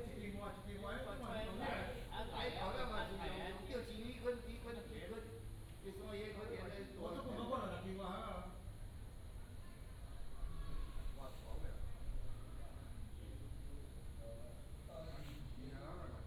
In the square, in front of the temple